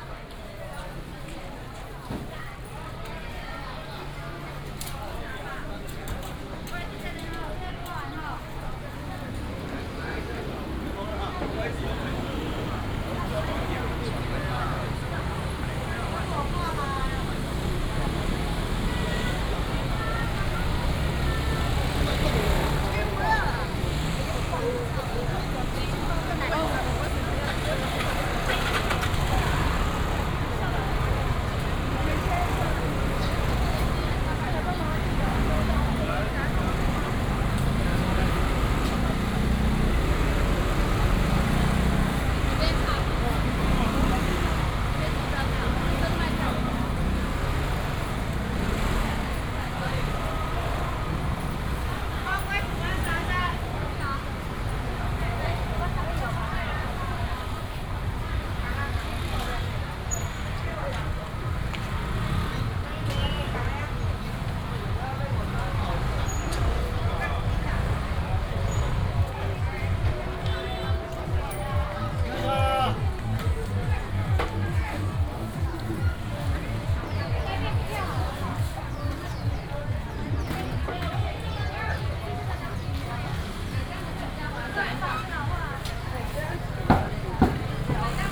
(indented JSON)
{
  "title": "Zhongyang N. Rd., Sanchong Dist., New Taipei City - Walking through the traditional market",
  "date": "2017-04-23 10:16:00",
  "description": "Walking through the traditional market, Traffic sound, Many motorcycles",
  "latitude": "25.07",
  "longitude": "121.50",
  "altitude": "14",
  "timezone": "Asia/Taipei"
}